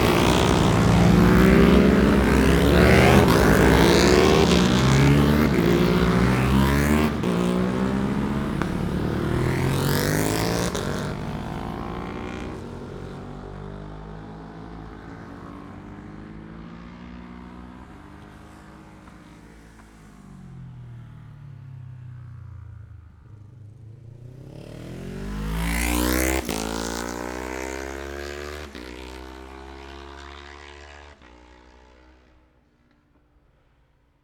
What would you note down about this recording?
Super lightweights ... 650cc practice ... Mere Hairpin ... Oliver's Mount ... Scarborough ... open lavaliers clipped to base ball cap ...